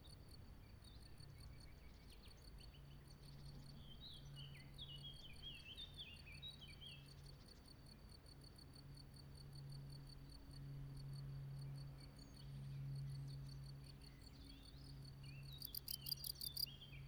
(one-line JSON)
{"title": "Meadow at the Tauber west of Werbach", "date": "2021-06-19 10:30:00", "description": "Crickets singing in a meadow. Recorded with an Olympus LS 12 Recorder using the built-in microphones. Recorder placed on the ground near a cricket-burrow with the microphones pointing skyward. In the background various motor noises as well as birds singing and Cyclists passing on the nearby bike-path.", "latitude": "49.67", "longitude": "9.63", "altitude": "170", "timezone": "Europe/Berlin"}